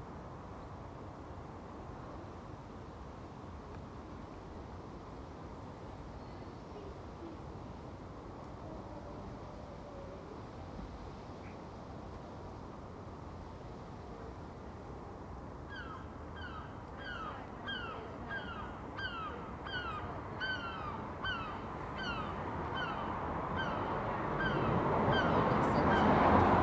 Contención Island Day 26 outer west - Walking to the sounds of Contención Island Day 26 Saturday January 30th
The Drive Westfield Drive Elmfield Road Richmond Mews
The small estate
no pavements
neat modernity
Cars pulse along the road behind me
A sparrowhawk
mobbed by a Herring Gull
circles
spins away
to drop into an old tree